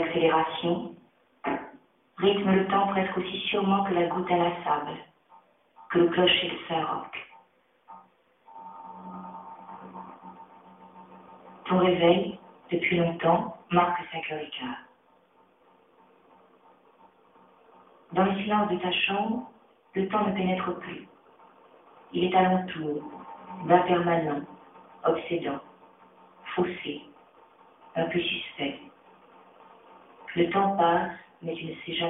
radio aporee - un homme qui dort - georges perec @ aporee, august 2007